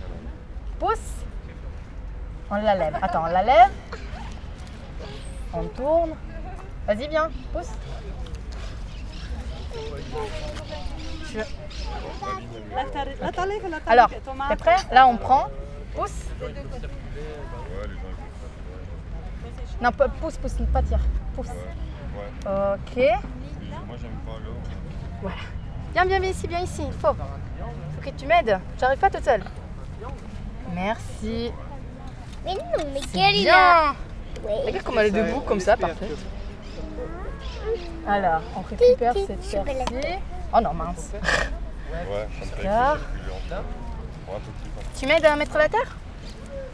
Brussels, Place de Moscou, Real Democracy Now Camp
The lawn becomes a garden.
Saint-Gilles, Belgium, 2 June 2011